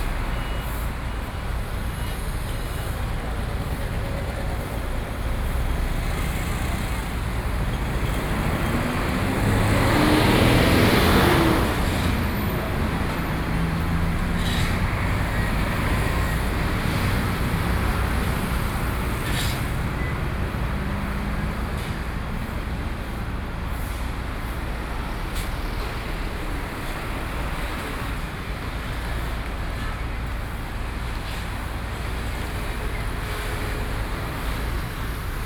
Commuting time street, Sony PCM D50 + Soundman OKM II